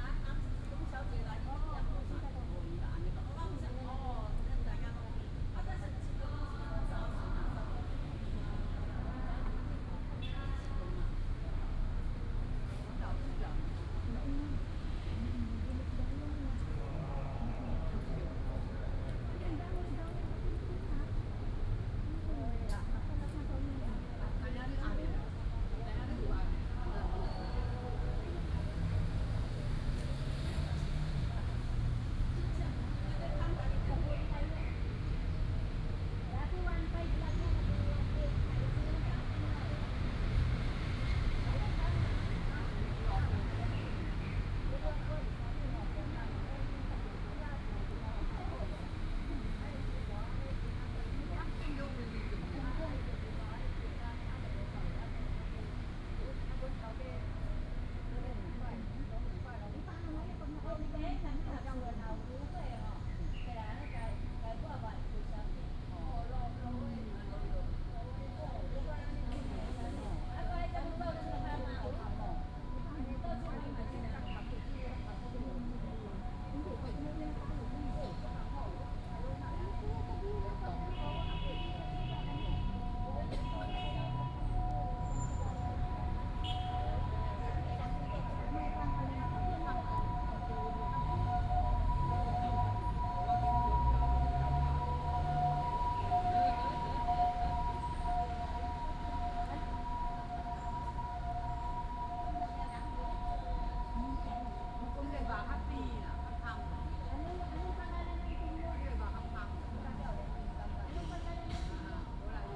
國立台灣大學公共衛生學院, Taipei City - In the Plaza
In the Plaza, Under the tree, Group of elderly people and their care workers, Traffic Sound, Bird calls
5 July, ~16:00